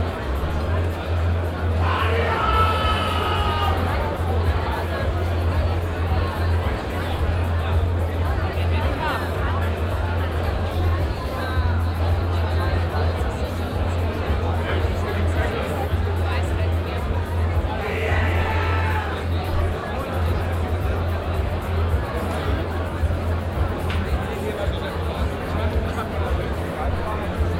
cologne, apostelnstrasse, gloria, konzertbesucher - koeln, apostelnstrasse, gloria, konzertbesucher
aufkochende publikumshysterie vor konzertbeginn anlaäslich der c/o pop 2008
soundmap nrw:
social ambiences, topographic field recordings